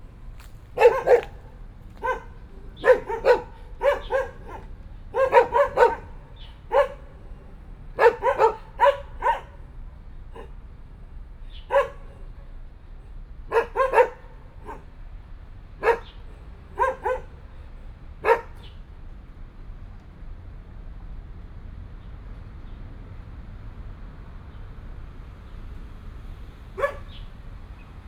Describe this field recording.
Dog sounds, Traffic sound, sound of the birds, The plane flew through